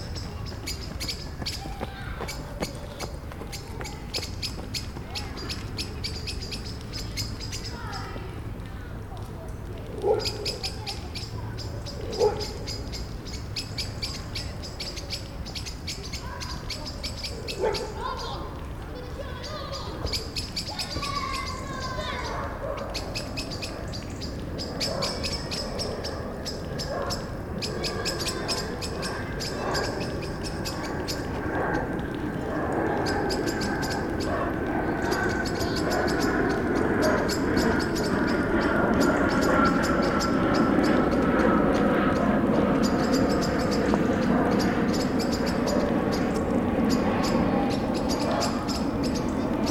Roma, IT, Parco Villa Torlonia - At dawn
At dawn in the park of Villa Torlonia in Rome: ranting blackbirds, dogs, joggers, children, a small babbling well, a plane approaching Roma Ciampino... Tascam RD-2d, internal mics.